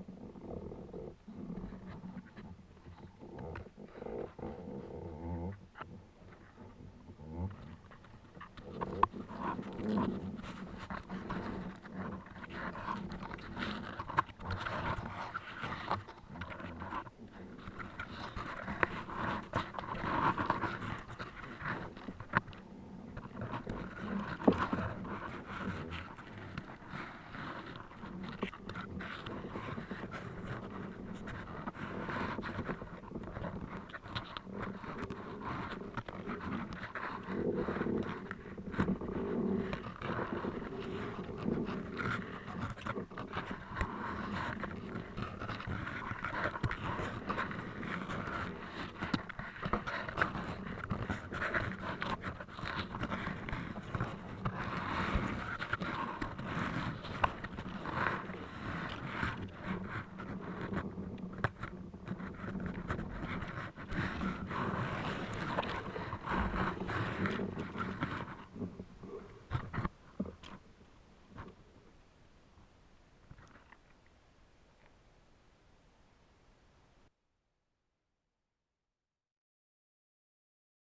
'playing' fallen trees below chasseline - KODAMA session
Improvisation on contact-microphoned falled trees below the village of Chasseline, France - during KODAMA residency August 2009